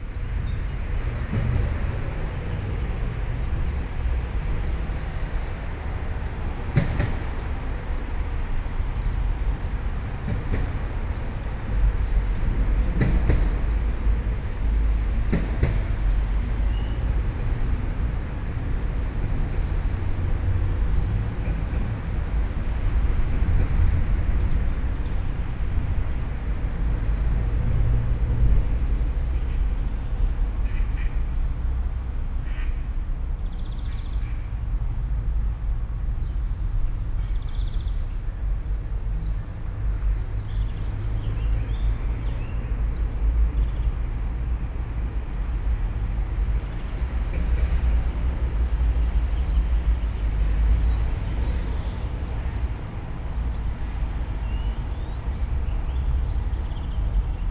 all the mornings of the ... - jun 5 2013 wednesday 07:13